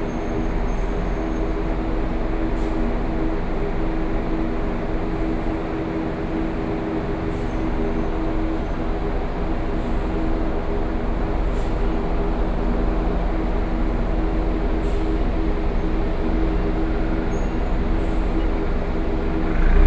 Air-conditioning noise, Sony PCM D50 + Soundman OKM II